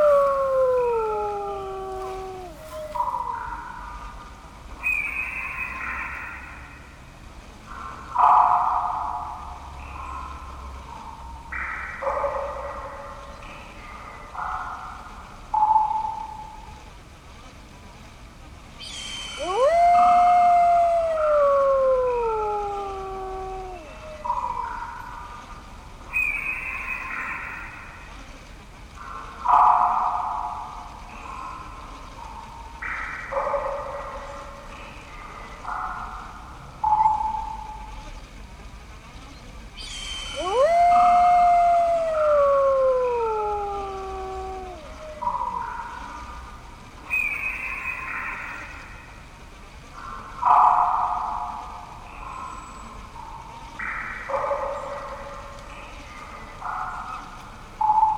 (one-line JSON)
{"title": "Alnwick Gardens, Alnwick, UK - Wind to play ...", "date": "2017-09-25 12:30:00", "description": "Wind to play ... please turn the handle gently ... thank you the Fairy Queen ... and thats what you get ... Alnwick Gardens ... part of the Garden of Fairy Tales feature ... open lavalier mics clipped to a sandwich box ... background noise ... some gentle winding can heard in the background ...", "latitude": "55.41", "longitude": "-1.70", "altitude": "61", "timezone": "Europe/London"}